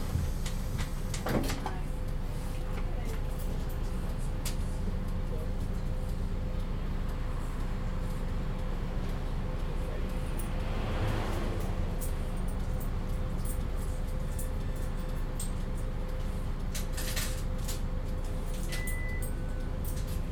Hampton Rd, South Fremantle WA, Australia - Bus To Fremantle
Taking a bus to Fremantle. The bus model was a Mercedes-Benz O405NH. Recorded on a Zoom h2n, MS mode.
1 November